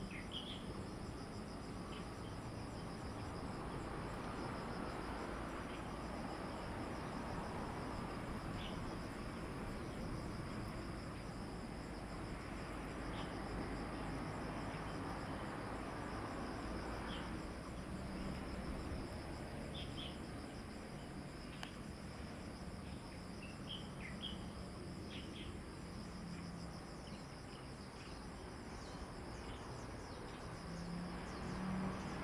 南王里, Taitung City - In the street
In the street, Birdsong, Traffic Sound, Aircraft flying through, The weather is very hot
Zoom H2n MS +XY
Taitung City, Taitung County, Taiwan, 9 September 2014, ~08:00